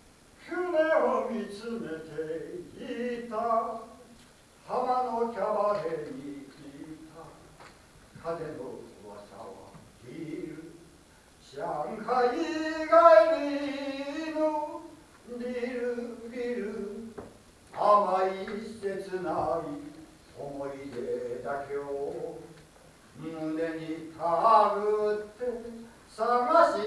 The beginning of a strange performance.